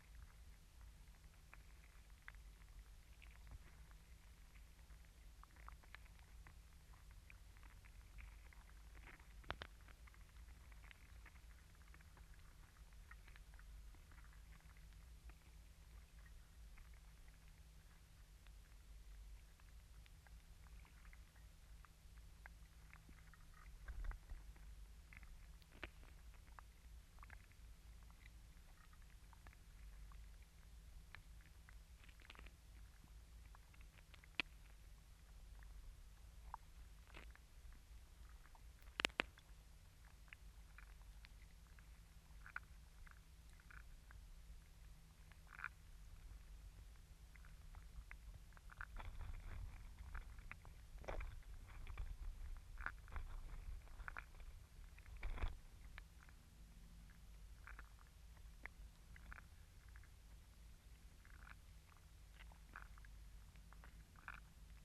Crabs in Salt Marshes
hydrophone recording of crabs in small body of water
Landimore, Swansea, UK